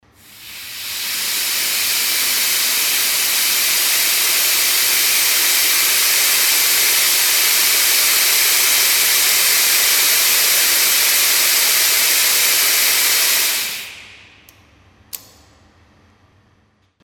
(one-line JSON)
{"title": "heinerscheid, cornelyshaff, brewery - heinerscheid, cornelyshaff, gas outlet", "date": "2011-09-12 18:04:00", "description": "Almost finally we can listen to the sound of gas that develops with the alcoholic fermentation and that is needed to be released from the fermentation tanks regularly through a special outlet.\nHeinerscheid, Cornelyshaff, Gasaustritt\nFast am Ende können wir das Geräusch des Gases hören, das mit der alkoholischen Fermentation entsteht und regelmäßig aus dem Fermentationstank durch einen speziellen Gasaustritt herausgelassen wird.\nHeinerscheid, Cornelyshaff, valve d'échappement des gaz\nEt enfin, nous pouvons entendre le bruit du gaz qui se forme lors de la fermentation alcoolique et qui a besoin d’être régulièrement expulsé des cuves de fermentation par une valve spéciale.", "latitude": "50.10", "longitude": "6.09", "altitude": "525", "timezone": "Europe/Luxembourg"}